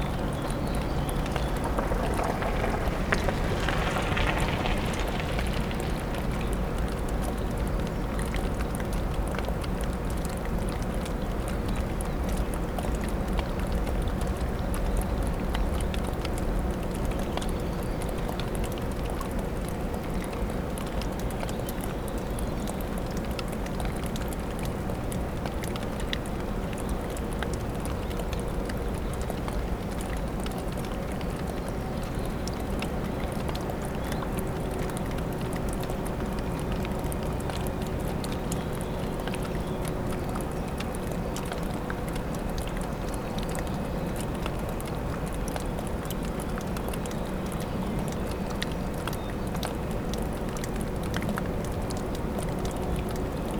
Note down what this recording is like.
water dripping from a rock, gushing of the wupper river, the city, the country & me: april 26, 2013